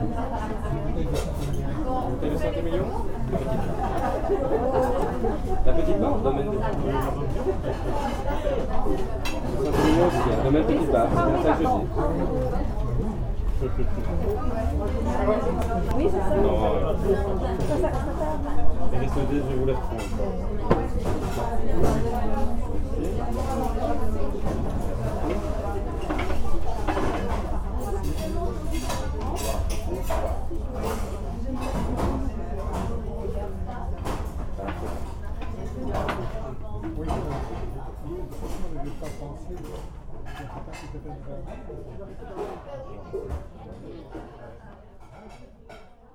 Rixensart, Belgique - Busy restaurant
Very busy restaurant on a sunny sunday afternoon.